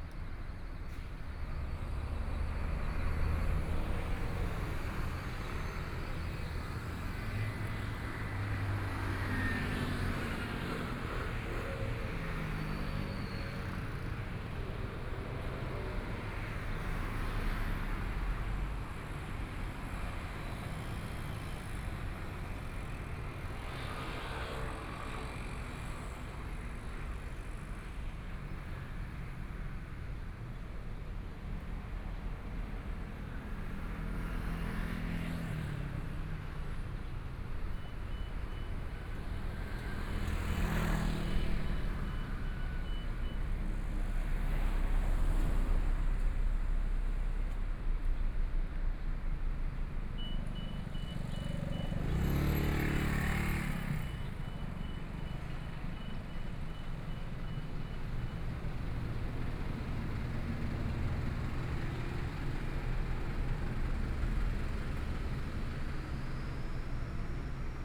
中山區行仁里, Taipei City - In the Street
walking In the Street, Traffic Sound, Being compiled and ready to break the market, Binaural recordings, Zoom H4n+ Soundman OKM II
20 January 2014, 14:51